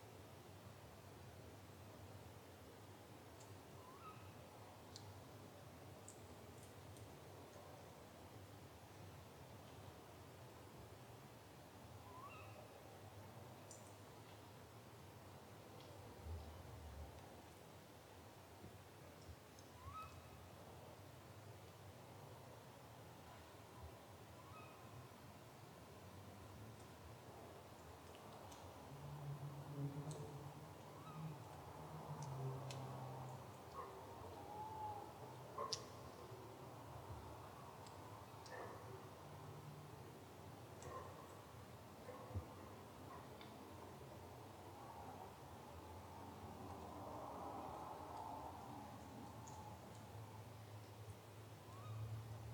Durweston, Dorset, UK - Nightime Rustling
Deer, badgers and other woodland wildlife go about there business at 1am.
Blandford Forum, Dorset, UK